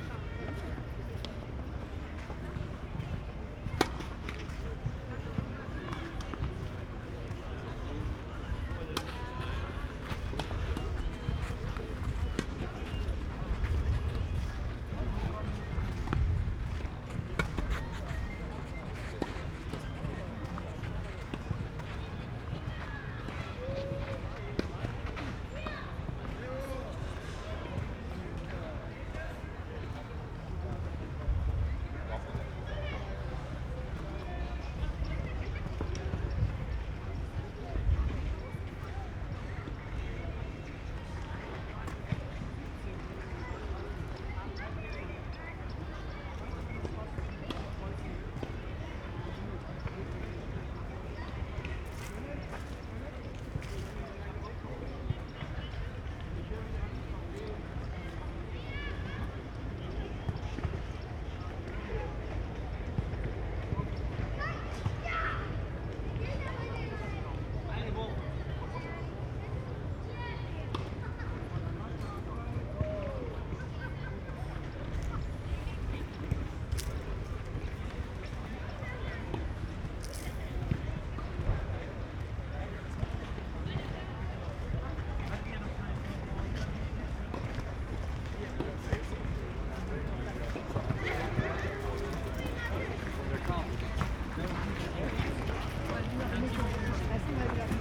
Gleisdreieck, Köln - walk in a rail triangle, ambience
Köln, Gleisdreieck (rail triangle), an interesting and strange enclosed area, surrounded by rail tracks. Walk between the tennis and soccer sports fields
(Sony PCM D50, Primo EM172)
Köln, Germany, 20 August 2015, 19:30